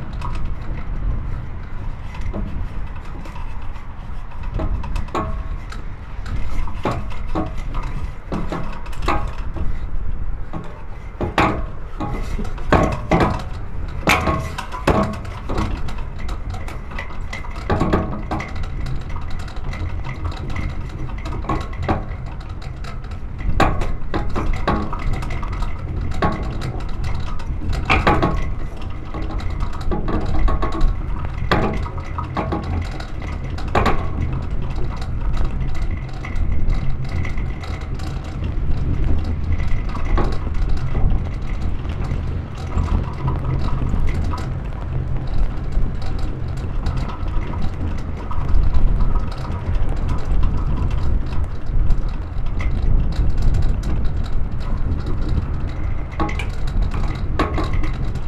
Srem, Lascada hotel driveway - flagpole
steel wire blasting at the flagpole in strong gushes of wind.
Śrem, Poland